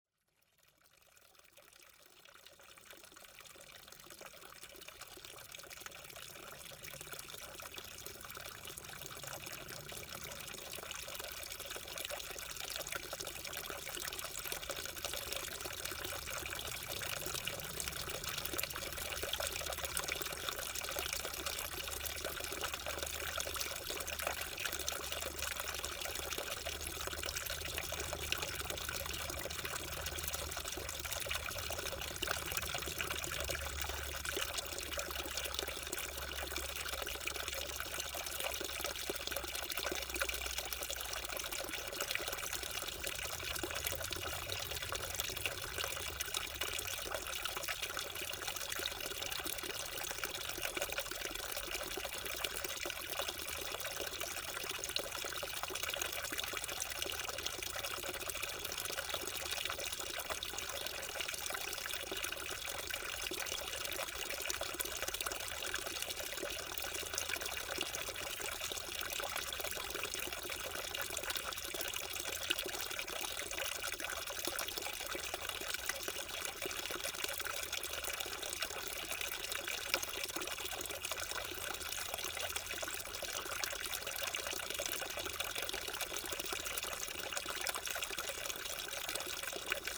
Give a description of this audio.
A very small spring located into the beautiful forest called Meerdaalbos.